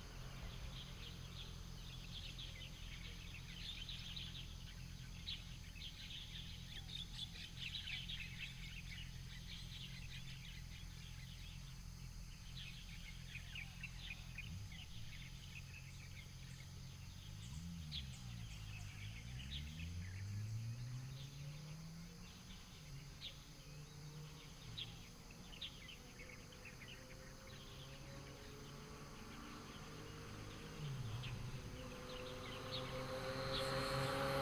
大河社區, Sanwan Township - heavy locomotives
Mountain road, There was a lot of heavy locomotives in the morning of the holidays, The sound of birds, Binaural recordings, Sony PCM D100+ Soundman OKM II
Miaoli County, Taiwan